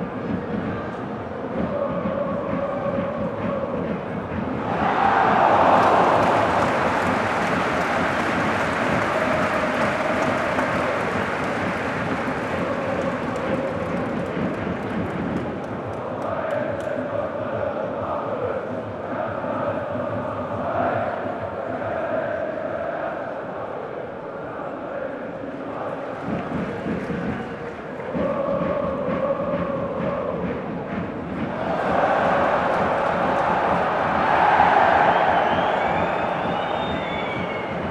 football league first division match between hertha bsc berlin and fc köln (cologne), begin of the second half, hertha fan chants. the match ended 0:0.
the city, the country & me: april 18, 2015